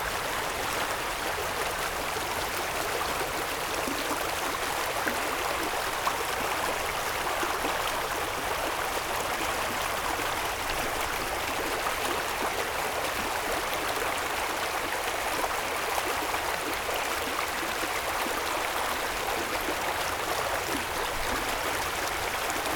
台東市東海濕地公園 - The sound of water
The sound of water, Zoom H6 M/S, Rode NT4